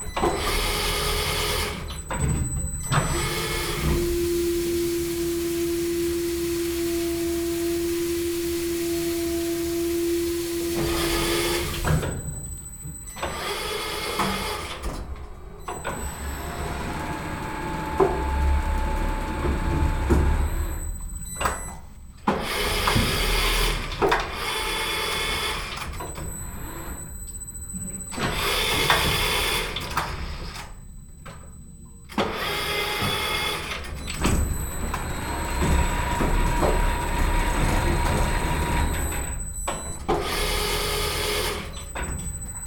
Franclens, France - Cheese making
In the "fromagerie Gojon", people are making traditional cheese called Comté Pyrimont. It's a 45 kg cheese, which needs 18 to 24 months refinement. This cheese is excellent and has a very stong odour. Here, a machine is turning each cheese, brush it with water and salt, and replace it back to the good place.
13 June 2017, ~10:00